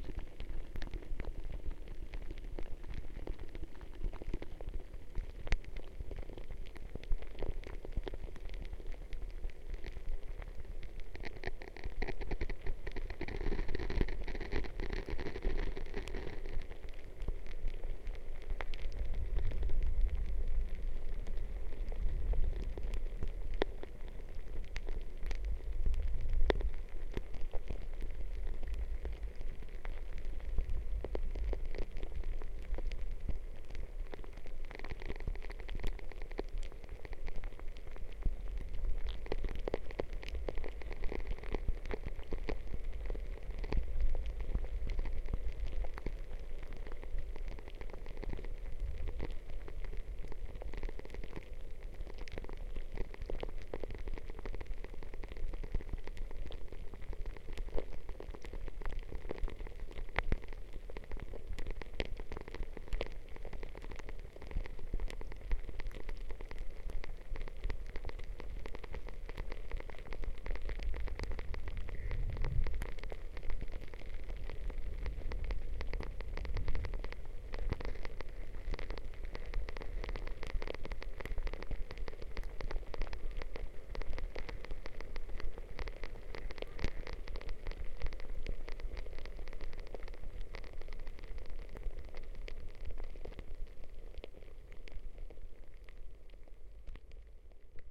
Vyžuonos, Lithuania, melting snow
a pair of contact mics in the last snow melting on spring's sun
27 February 2019, ~16:00